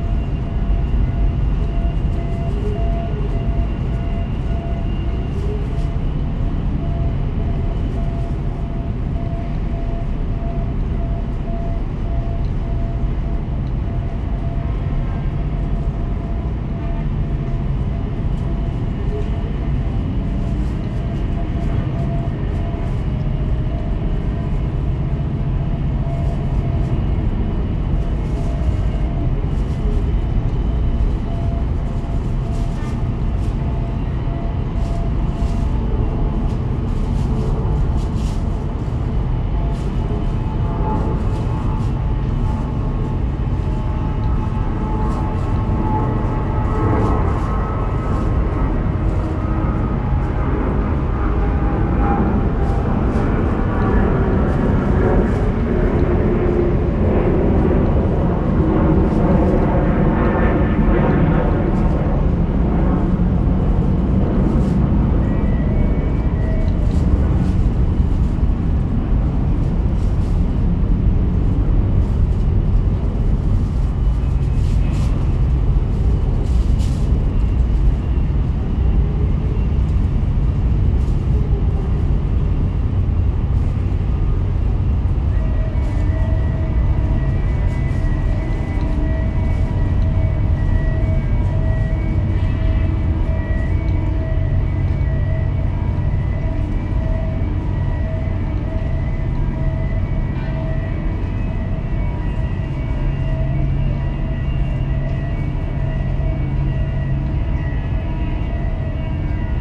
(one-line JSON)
{"title": "Oakland Harbor, CA, USA - Middle Harbor Shoreline Park", "date": "2016-01-13 16:15:00", "description": "Recorded with a pair of DPA 4060s and a Marantz PMD 661", "latitude": "37.80", "longitude": "-122.33", "altitude": "2", "timezone": "America/Los_Angeles"}